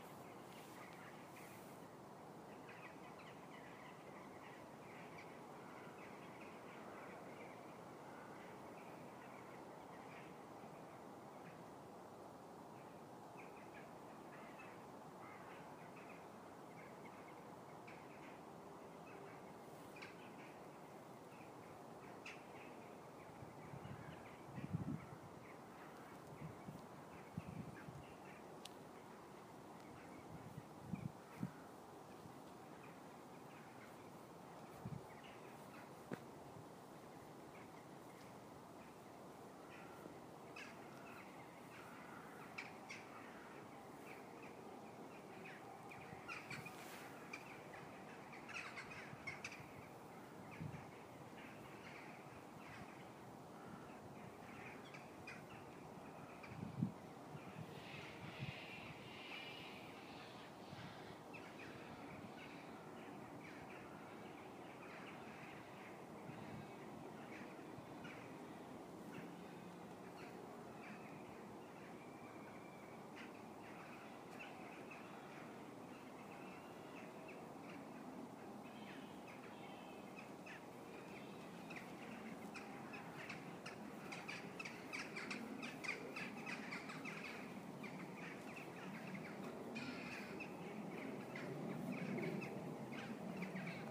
Schlosspark Herten, Lieferantenzufahrt zur LWL-Klinik - Vogelgezwitscher im Schlosspark Herten
Birds chirping on a mild February evening in the park of Herten, Germany. Recorded with my iPhone 5.
Herten, Germany